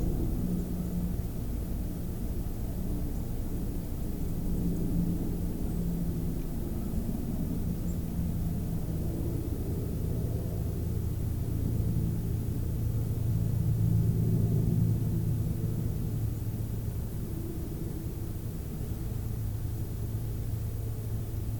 Warren Landing Rd, Garrison, NY, USA - Tree hollow
The drone sound of an airplane captured inside of a tree hollow.